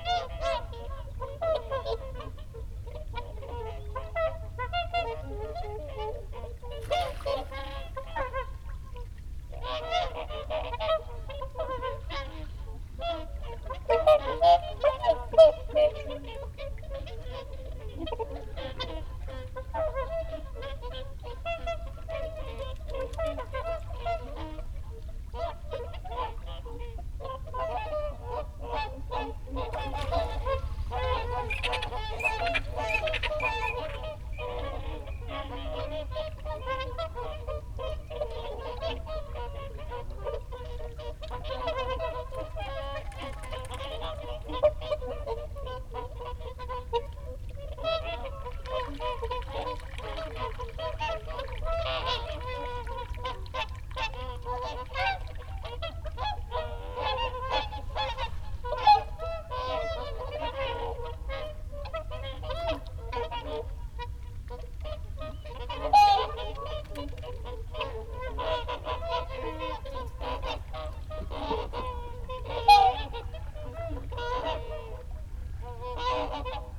whooper swan soundscape ... folly pond ... dummy head with luhd in ear binaural mics to olympus ls 14 ... bird calls from ... mute swan ... canada geese ... mallard ... oystercatcher ... wigeon ... shoveler ... snipe ... teal ... jackdaw ... redshank ... barnacle geese flock fly over at 23 mins ... ish ... compare with sass recording made almost the same time in the scottish water hide some 100m+ away ... time edited extended unattended recording ...
31 January 2022, ~17:00